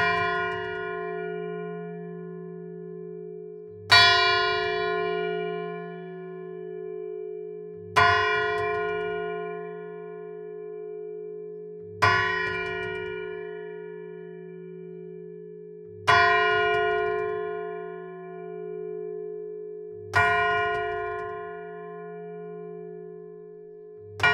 Ctr de l'Église, Mametz, France - église St-Vaast de Mametz - Pas-de-Calais - le Glas
église St-Vaast de Mametz - Pas-de-Calais
Une seule cloche - Le Glas
"Cette cloche a été fondue le 14 juillet 1862 et bénite solennellement sous l’administration de Messieurs
Chartier Prosper maire de la commune de Mametz département du Pas de Calais et Scat Jean-Baptiste Adjoint. Monsieur l’abbé Delton, Amable Jean-Baptiste desservant la paroisse de ladite commune.
Elle a reçu les noms de Félicie Marie Florentine de ses parrain et Marraine Monsieur Prisse Albert Florian Joseph attaché au Ministère des Finances et Madame Chartier Prosper née Félicie Rosamonde Lahure."